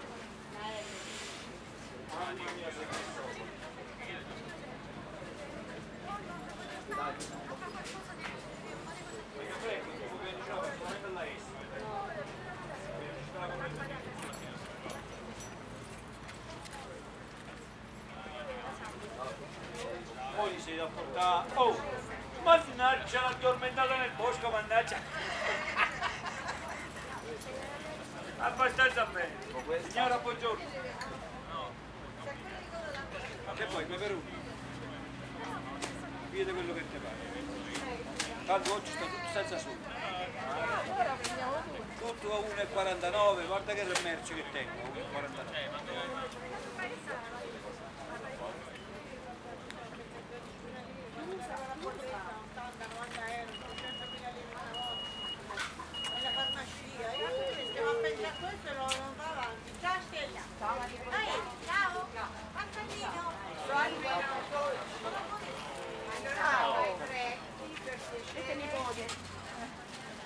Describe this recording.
Area adibita a mercato giornaliero dopo il terremoto del 2009. In precedenza era un’area militare adibita ad esercitazioni per automezzi militari.